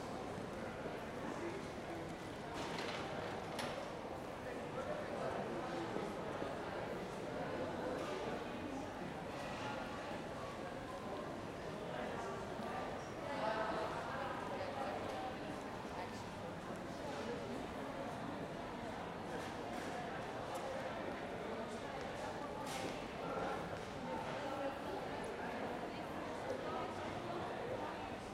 Hoog-Catharijne CS en Leidseveer, Utrecht, Niederlande - entrance "hello city" 2
some minutes later... slightly different position